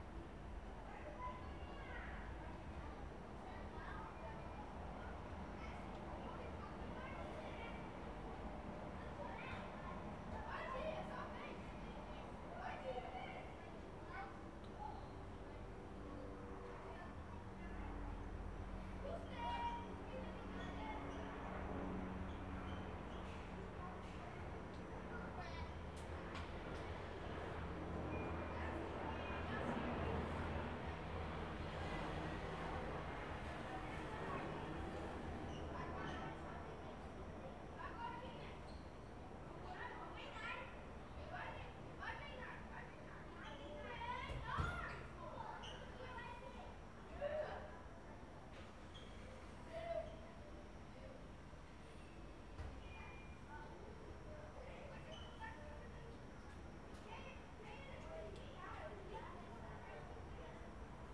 R. Ipanema - Mooca, São Paulo - SP, 03164-200, Brasil - CAPTAÇÃO APS UAM 2019 - INTERNA/EXTERNA
Captação de áudio interna para cena. Trabalho APS - Disciplina Captação e edição de áudio 2019/1
São Paulo - SP, Brazil, 1 May 2019, 15:00